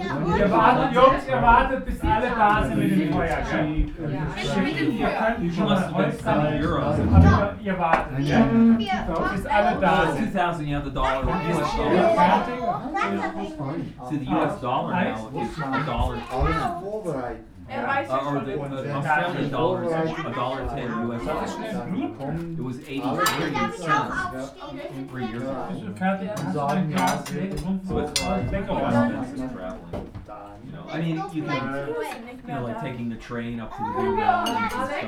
neoscenes: dinner at the cabin